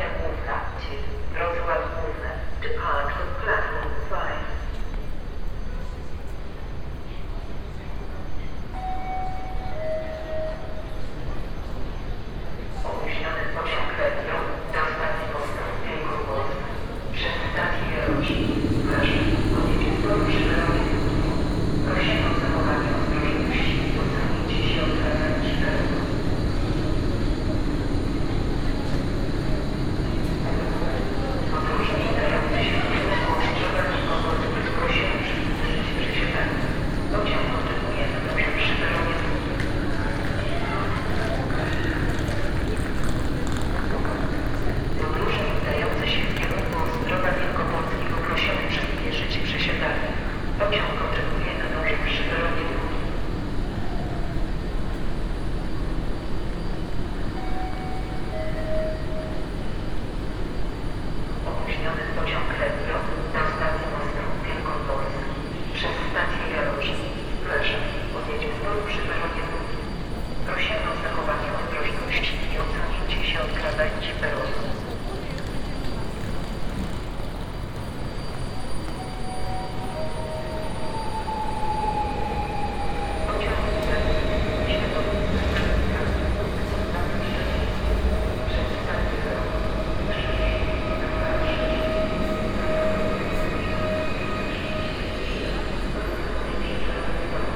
Poznan, central train station - station to station
(binaural) going from platform 3 through a tunel under the old station building to the west station. train announcements, hurrying passengers, rumbling suitcases, trains idling. (sony d50 + luhd pm01 binaurals)